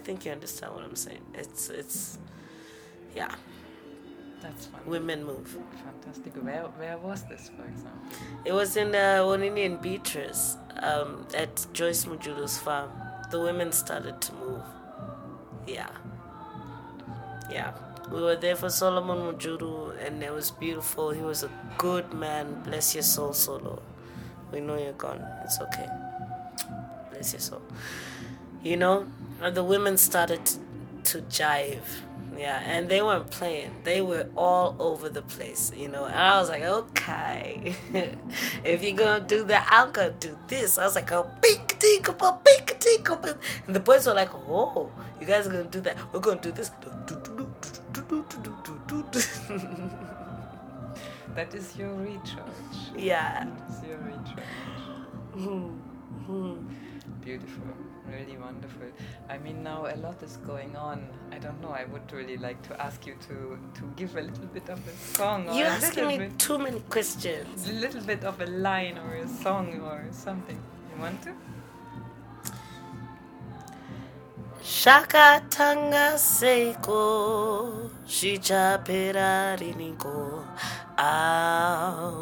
Book Cafe, Harare, Zimbabwe - Chiwoniso Maraire “this is our future…”
… Chiwoniso continues talking about her love and trust in the young women entering into the performing arts in Zim ; and her adoration for the women in the countryside whom she loves joining in music. The recording ends with Chi giving a beautiful description of a communal jamming and dancing with women in the countryside; and a line from a song…
Chiwoniso Maraire was an accomplished Zimbabwe singer, songwriter and mbira artist from a family of musicians and music-scholars; she died 24 July 2013.